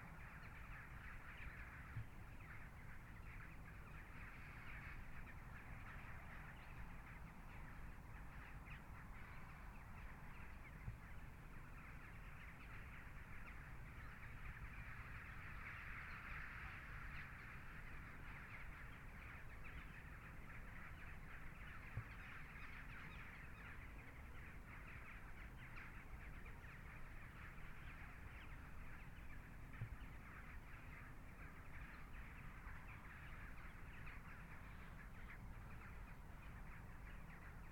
Oud Vliegveld, Gent, België - Oud Vliegveld
[Zoom H4n Pro] Sundown at the old airstrip in Oostakker/Lochristi. In the First World War, the Germans deposited tonnes of sand on the site to turn it into an airstrip. It was never used because the war ended, and now the sand is being mined. This created a large body of water, home to many birds.
Gent, Belgium, 3 February 2019, ~17:00